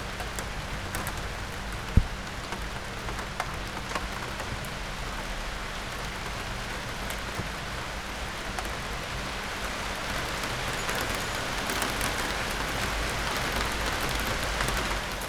Berlin Bürknerstr., backyard window - spring day, it starts to rain
it starts to rain after a warm early summer day.